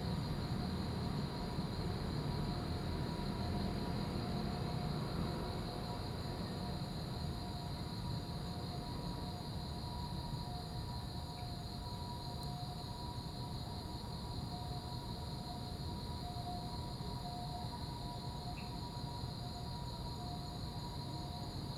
福州山公園, Da'an District 台北市 - Park night
In the park, Sound of insects, Traffic noise
Zoom H2n MS+XY